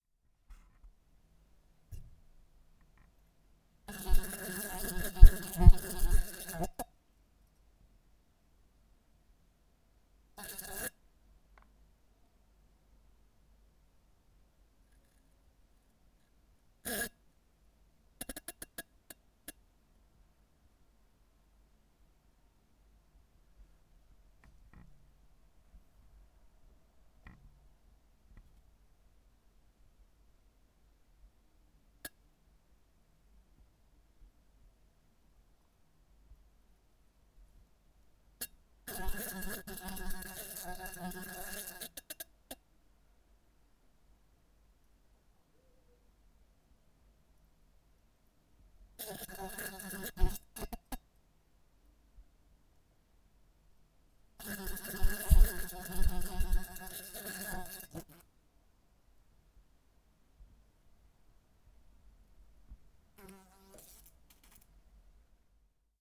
Poznan, Mateckiego Street - trapped fly
a fly trapped itself in a bedside lamp